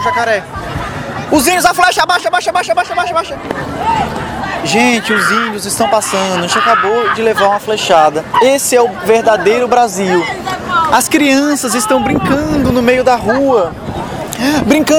Flexeiras, Ceará, Brazil - Crazy tour guide presents the Flexeiras Beach
Crazy tour guide presents the Flexeiras Beach.